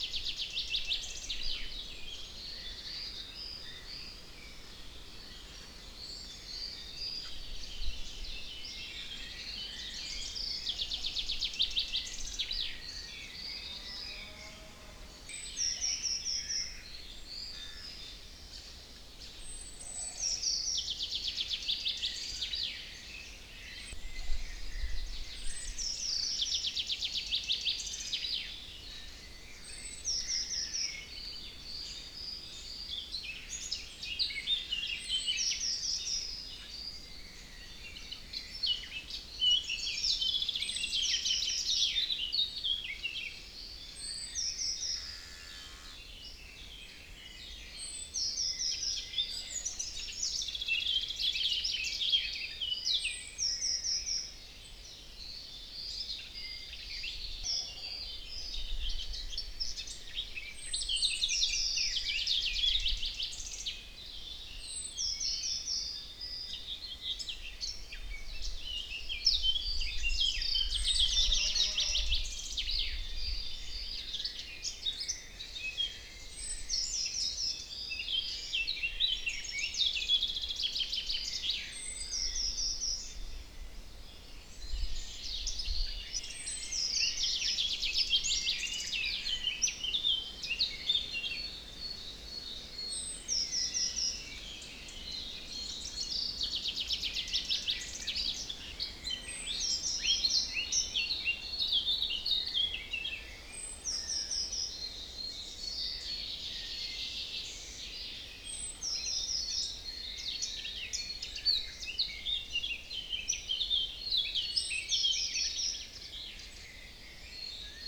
{"title": "Branišov, Ústí, Czechia - Dawn Chorus in Branišov", "date": "2020-05-03 04:15:00", "description": "Dawn Chorus recorded and from different device broadcasted for the Reveil 2020. Standing in the garden of the baroque priest house, near the church of saint Wenceslaw and cemetery. Windy, cold and occasionally showers.", "latitude": "49.47", "longitude": "15.43", "altitude": "654", "timezone": "Europe/Prague"}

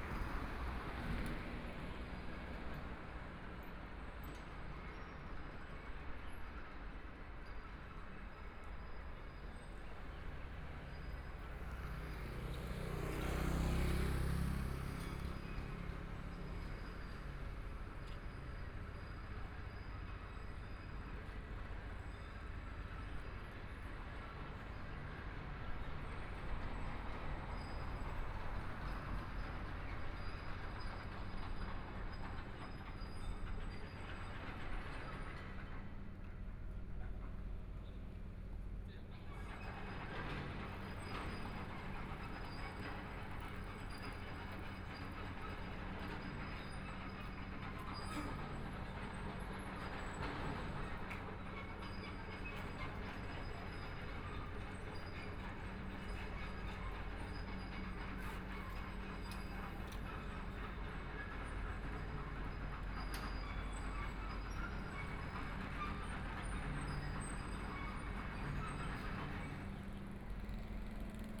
{"title": "Fuyang Rd., Hualien City - In the Street", "date": "2014-02-24 17:17:00", "description": "walking In the Street, Traffic Sound, sound of the Excavator traveling through\nPlease turn up the volume\nBinaural recordings, Zoom H4n+ Soundman OKM II", "latitude": "24.00", "longitude": "121.60", "timezone": "Asia/Taipei"}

